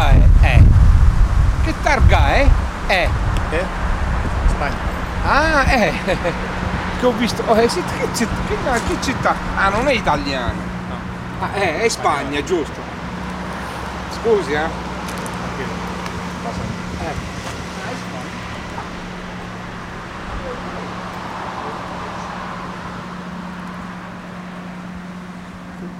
Trieste. right next to the port
27/12/2009. 15:30. Coming to town. a man asks for the car plate.
Trieste, Italy